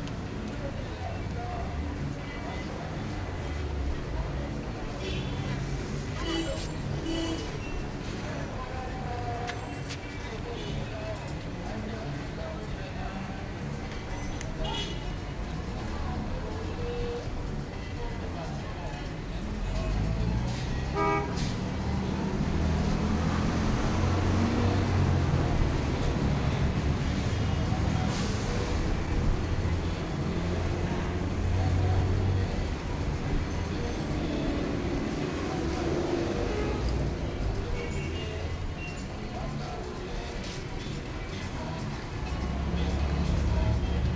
enregistré sur le tournage de bal poussiere dhenri duparc